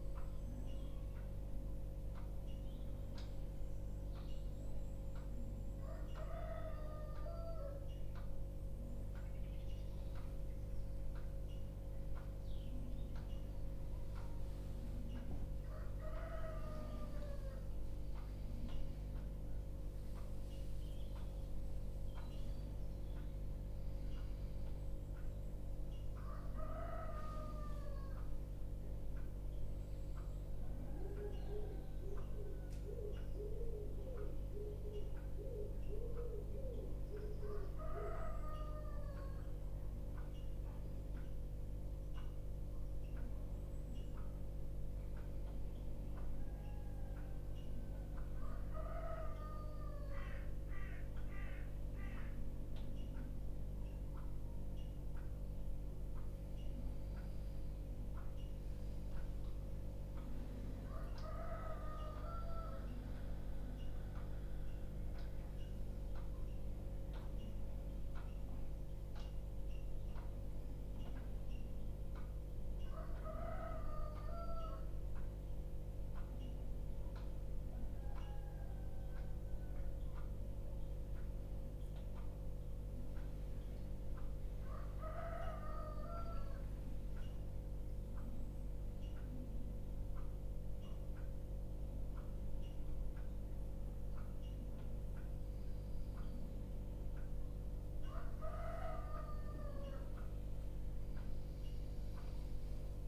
Unnamed Road, Dorchester, UK - New Barn Morning Meditation Pt1
This upload captures the morning chant read in English and then chanted in Vietnamese. (Sennheiser 8020s either side of a Jecklin Disk on a SD MixPre6)